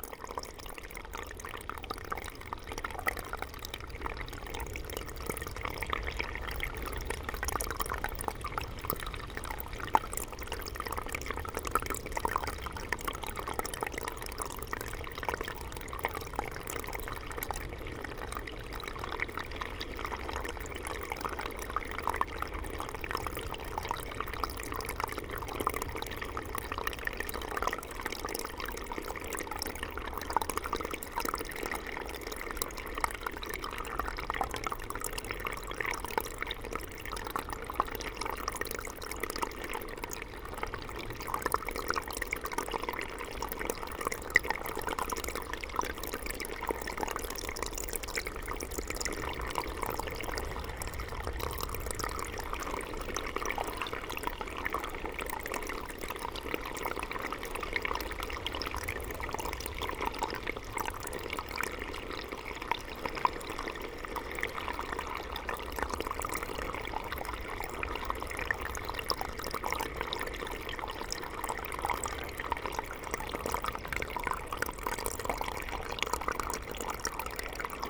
The Seine river is 777,6 km long. This is here the sound of the countless streamlets which nourish the river. Water gushes from holes near every walk path.